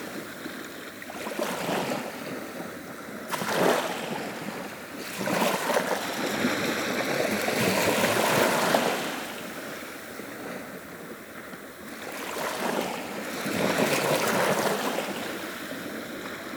Cadzand, Nederlands - The sea
On the large Cadzand beach, quiet sound of the sea during the low tide.